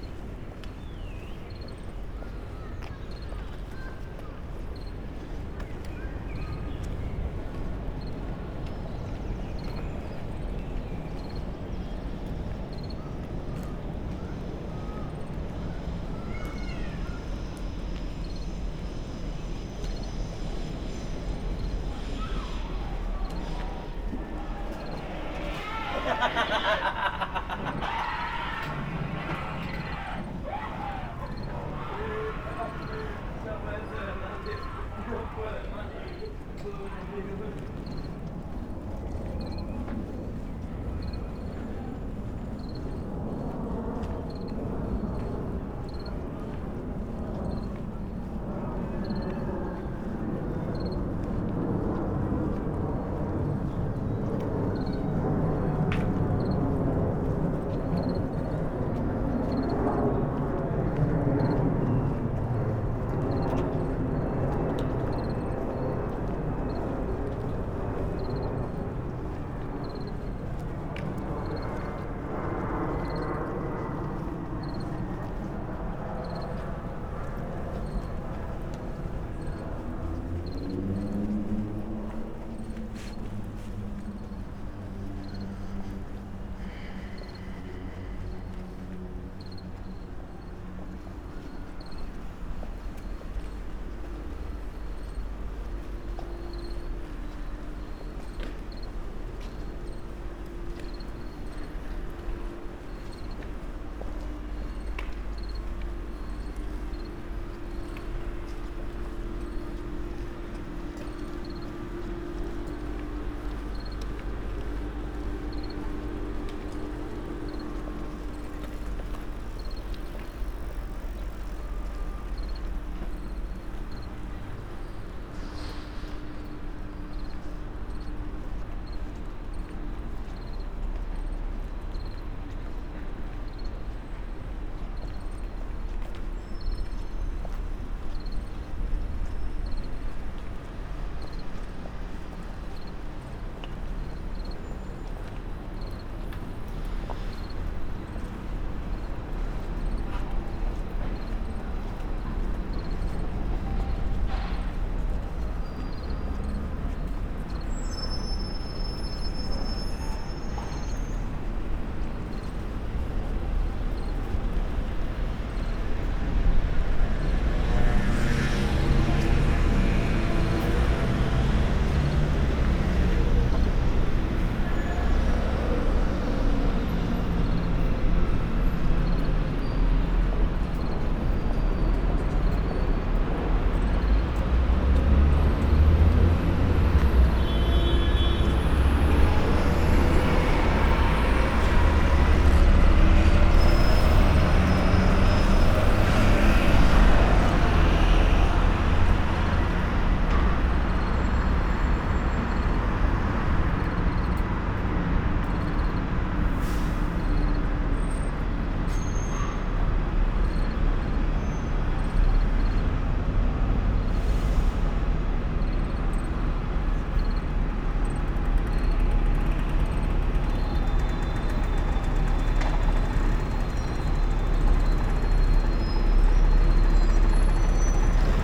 London, UK - Walking with Crickets
Continuing a sonic trend that originated in China during the Tang Dynasty, sound artist Lisa Hall from London College of Communication guides a group on a sound walk, each member carrying a small speaker playing sounds of a cricket. Binaural recording employing PM-01 Luhd microphones into a Tascam DR-05.